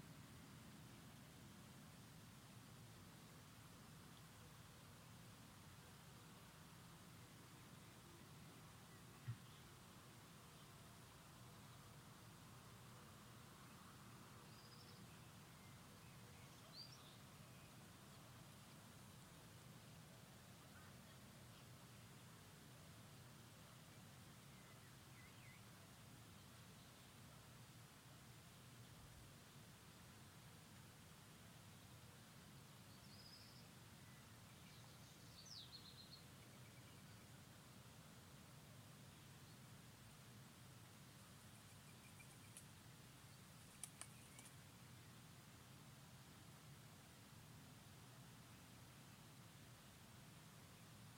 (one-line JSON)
{"title": "Ulička III, Rakvice, Česko - moravian village", "date": "2020-04-15 19:35:00", "latitude": "48.85", "longitude": "16.82", "altitude": "161", "timezone": "Europe/Prague"}